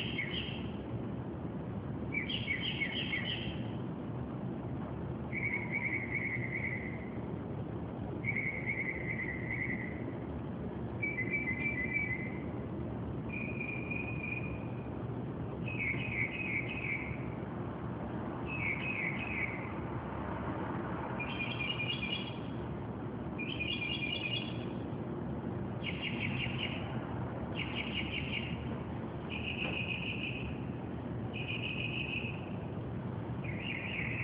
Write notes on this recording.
bird singing and chirping verious car alarm sounding songs in brooklyn - with occasional street noises such as sirens